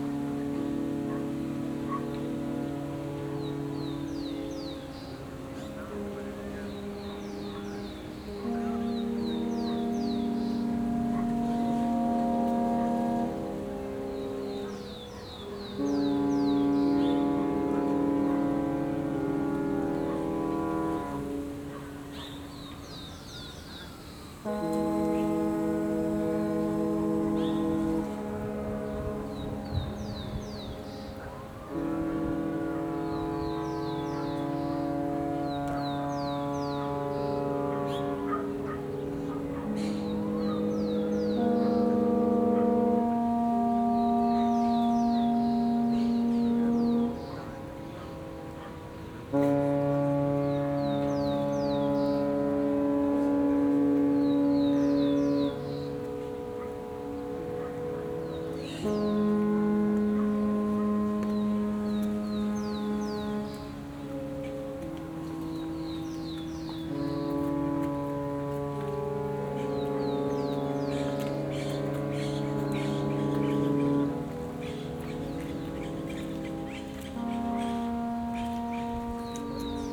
Cerro Carcel, Valparaíso - Ship horns in the harbor of Valparaiso
Horns from various boats at the same time (probably for some event, an important boat leaving or arriving) recorded from Cerro Carcel (in front of the Ex Carcel) in Valparaiso, Chile. Sounds from the city in background.
Recorded in December 2018 by a Binaural Microphone Smart Ambeo Headset (Sennheiser) on an iPhone.
Date: 181207 at 17h20
GPS: -33.045410 -71.627216
7 December 2018, 17:20